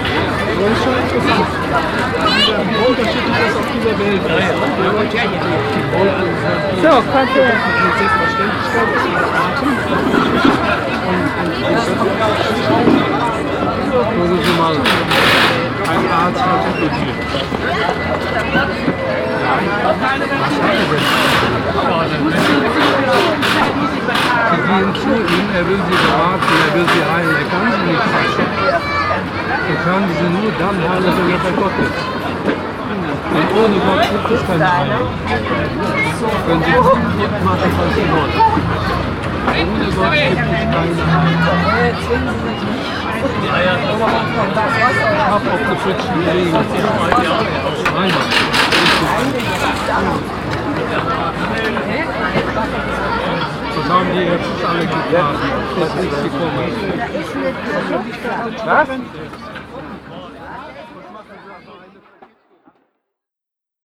Overath, Deutschland - overath, bahnhofplatz, spring feast
Recorded during the annual local spring feast on the small cental square of the town. Standing wind protected at a tent of Jesus people witnessing the conversation of a member of the Jesus people with a feast visitor. Parallel the sound of the overall action on the square and a small caroussel in the centre.
soundmap nrw - social ambiences and topographic field recordings